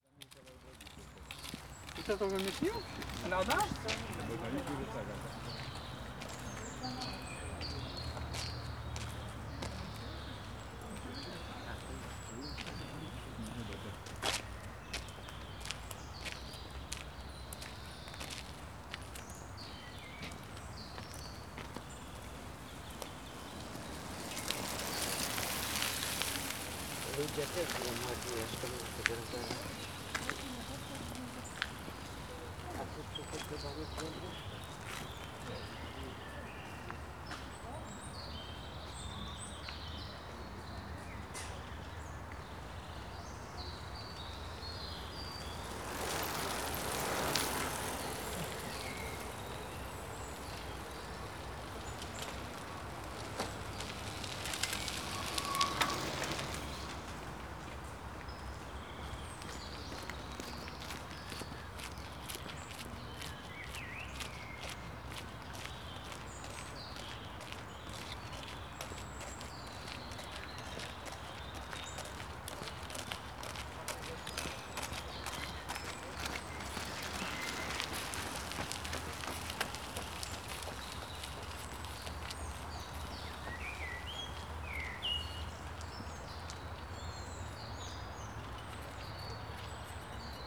lots of bird activity in the park during first warm spring days. strollers walking here and there on a gravel path. bikes passing by.
Poznan, Poland, March 2014